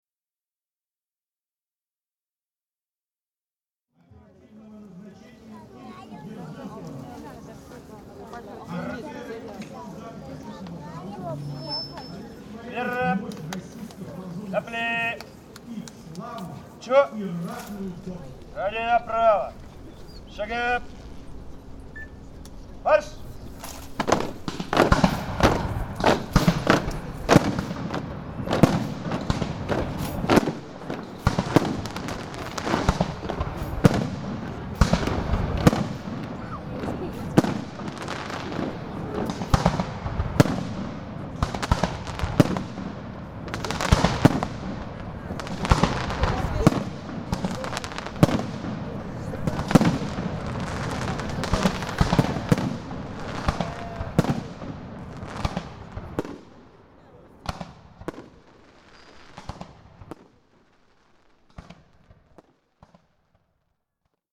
Dzerginsk, near Nikolo-Ugreshsky Monastery, St. Nicholas the Miracle-Worker day